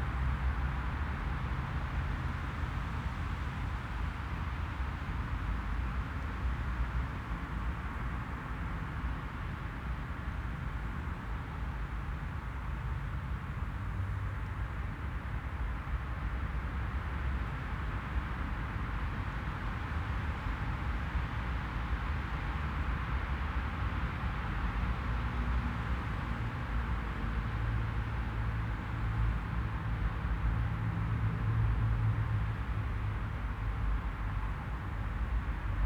Kopernikusstraße, Linz, Austria - Autobahn traffic dominates the soundscape but the park is beautiful
The northend of Bindermichl park where the autobahn emerges from the tunnel below. For your eyes is a beautiful linear park planted with native and exotic trees, bushes and flowers. For your ears only traffic. This was recorded beside a row of metal pillars overgrown with vines bearing very large green beans.
Oberösterreich, Österreich, 9 September 2020